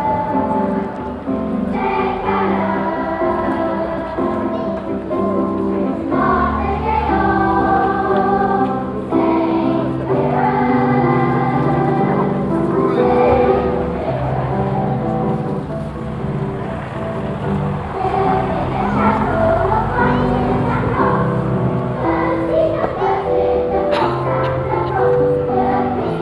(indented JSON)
{
  "title": "Truro, Cornwall, UK - Binoral Recording - Truro St Pirans Day Performance",
  "date": "2013-03-05 14:21:00",
  "description": "Truro St Piran's Day Parade 2013 - High Cross outside the cathedral, recorded with binaural headphones from a crowds perspective using a Zoom H4n Handheld Digital Recorder. The recording is during a school choir performance",
  "latitude": "50.26",
  "longitude": "-5.05",
  "altitude": "11",
  "timezone": "Europe/London"
}